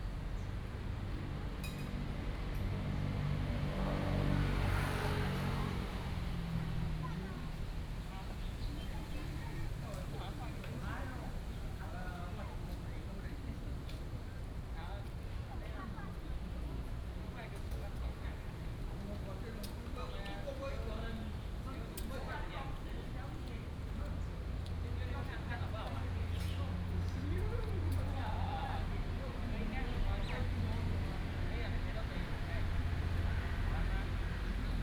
敦親公園, Da'an Dist., Taipei City - in the Park
in the Park, Very hot weather, Bird calls, Traffic noise